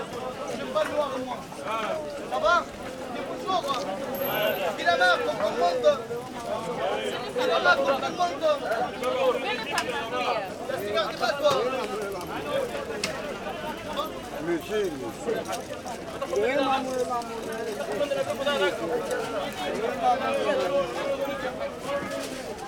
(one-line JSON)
{"title": "Place de la Réunion, Paris, France - Place de la Réunion 75020 Paris", "date": "2010-07-18 11:13:00", "description": "Marché du dimanche matin Place de la Réunion\nworld listening day", "latitude": "48.86", "longitude": "2.40", "altitude": "67", "timezone": "Europe/Paris"}